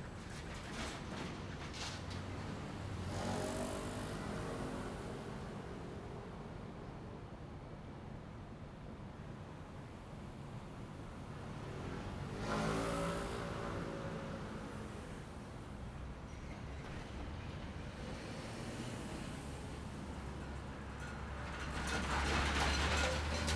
Next to the railroad track, Traffic sound, The train runs through, Zoom H2n Spatial